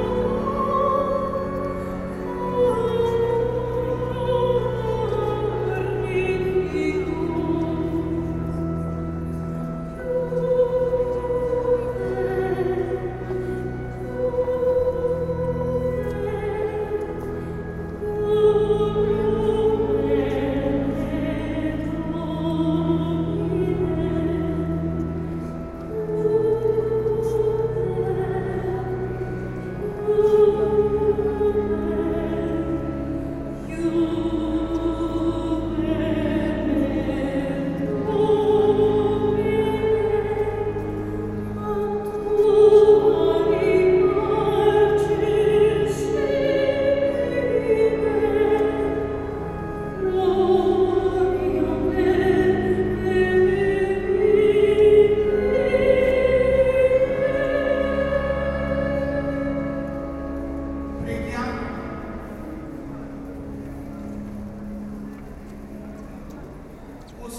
Duomo di Catania, Piazza Duomo, 95121 Catania CT, Italia (latitude: 37.50238 longitude: 15.08786)
si canta per lottava di S. Agata
Italia, European Union